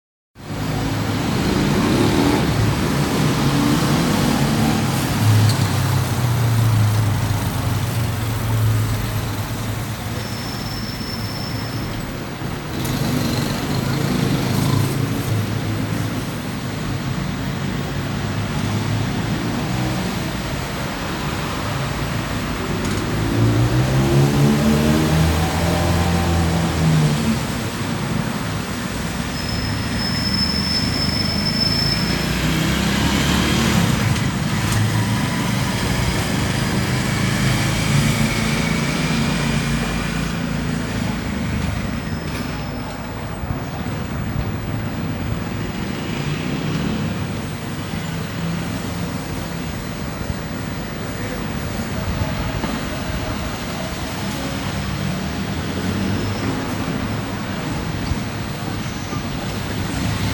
Urban soundscape in the morning of the pontevedra neighborhood in the city of Bogota, where you can hear the sound of the wind and traffic, where you can hear the sounds of cars and motorcycles.
You can also hear the sound of street vendors and some voices of passersby.